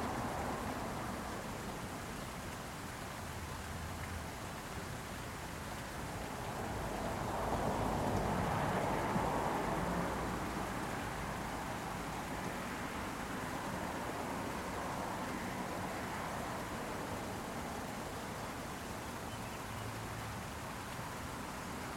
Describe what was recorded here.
Headwaters of the River Des Peres